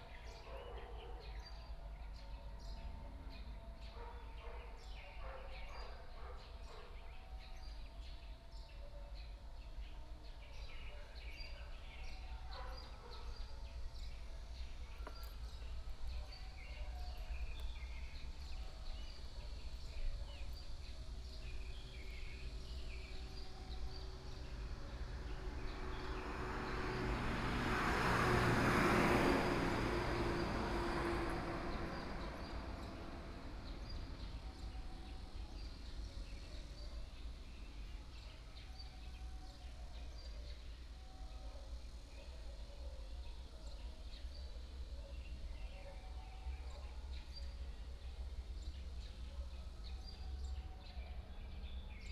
招寶宮, Su'ao Township 岳明里 - In the temple plaza
In the temple plaza, Hot weather, Traffic Sound, Birdsong sound, Small village
Yilan County, Suao Township, 28 July 2014